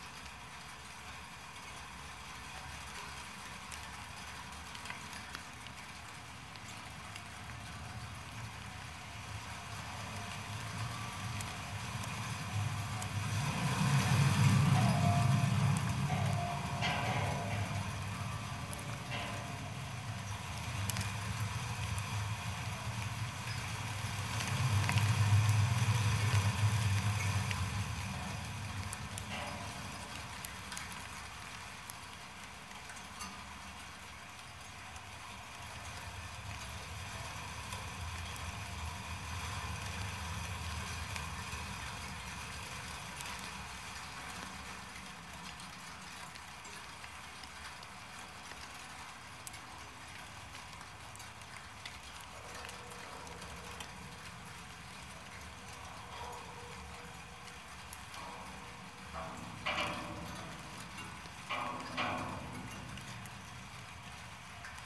snow storm in Berlin during Radio Aporee Maps workshop. recorded with contact mics
Berlin, Deutschland, European Union